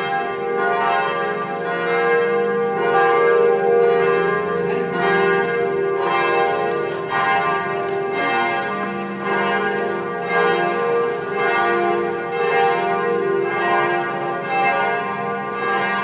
streets, Novigrad, Croatia - bellbath
church bells between tiny streets
13 July 2013, 10:30